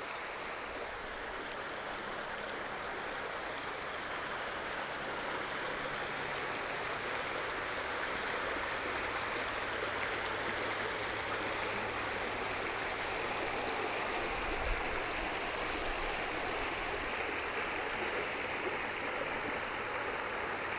Gyé-sur-Seine, France - In the center of Gyé
Near the bridge of the small village called Gyé-Sur-Seine, we are near the Seine, in the Champagne area, in the heart of the champagne vineyard. This recording is a walk in the center of the village : the Seine river, a square with a small power station, enormous tractors passing by and the church ringing. I don't identify the bird song, please help if you can !